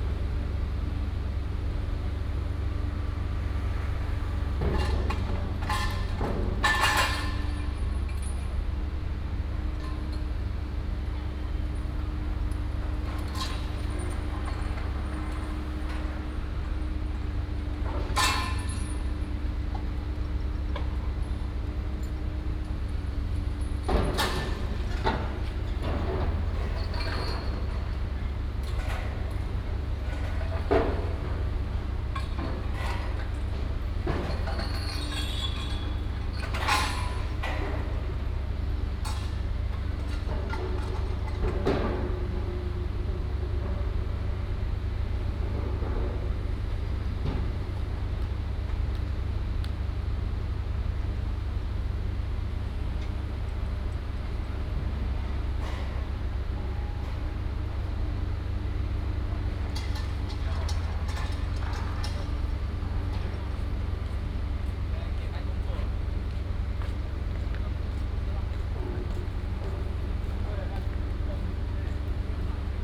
高雄火車站, 三民區 Kaohsiung City - Construction sound
At the station square, Construction sound